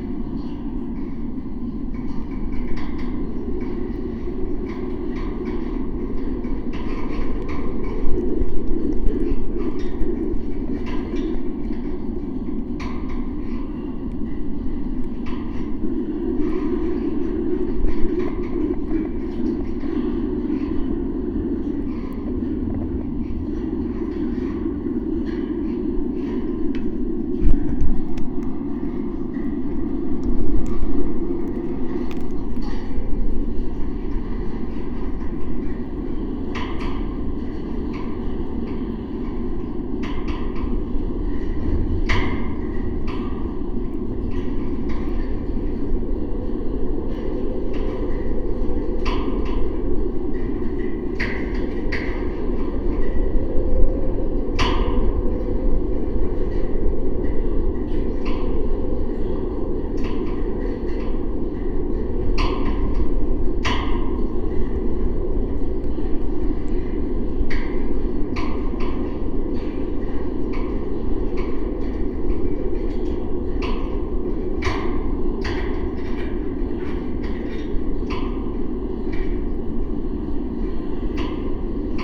Wyspa Sobieszewska, Gdańsk, Poland - Wind harp
Harfa wiatrowa. Mikrofony kontaktowe umieszczone na ogrodzeniu wydmy od strony plaży.
Wind harp. Contact mics mounted on the fance.
Warsztaty Ucho w Wodzie, Wyspa Skarbów GAK
15 May 2015, 10:30